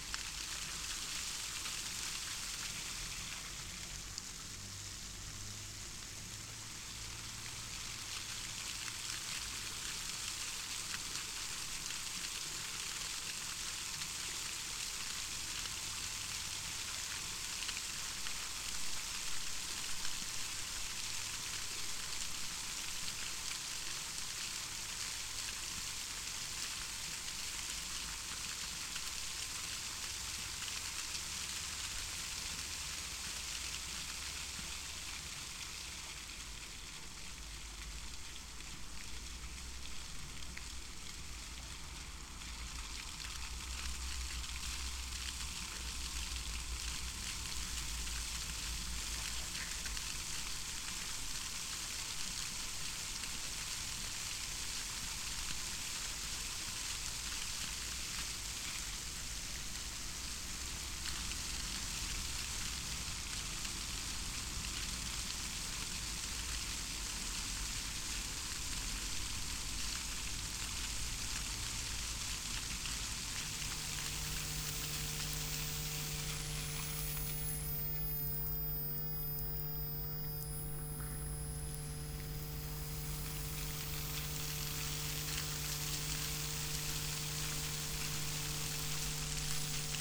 the fountains at local cultural center. the recording has three parts. 1. accoustic, 2. accoustic + electromagnetic field, 3. electromagnetic field (the work of pump motors)